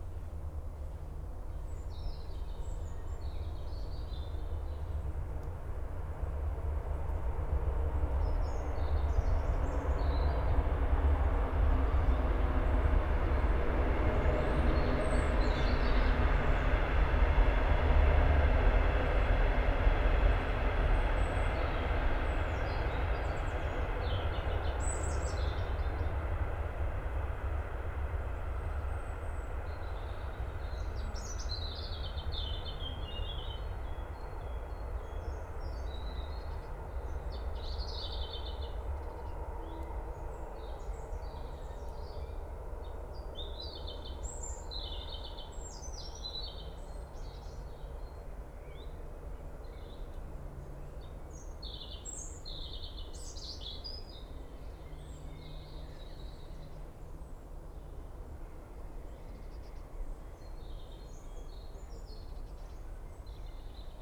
Schöneberger Südgelände, Berlin - afternoon park ambience
art & nature park Schöneberger Südgelände, ambience on a warm Saturday afternoon in spring
(Sony PCM D50, DPA4060)
Berlin, Germany, 20 April 2019